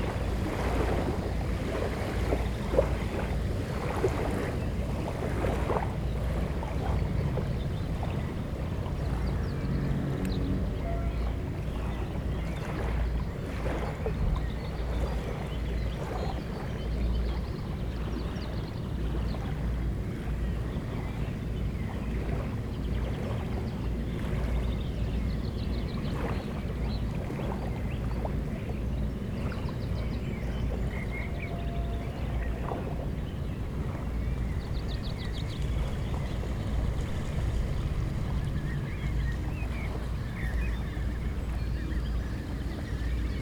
Strandbadweg, Mannheim, Deutschland - Strandbad Ambiente
Strandbad, Rhein, Schiffe, Gänse mit Jungtieren, Wellen, Wind, Vögel, urbane Geräusche